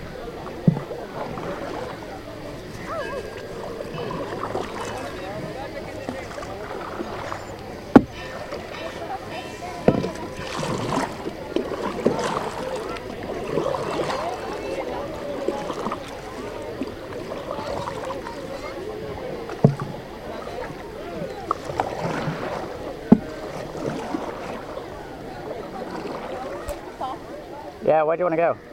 Rowing on this beautiful lake in a wooden boat, we realised there was an island in the middle. As we approached it we could hear the temple's bells, lots of voices, and more insect chirps.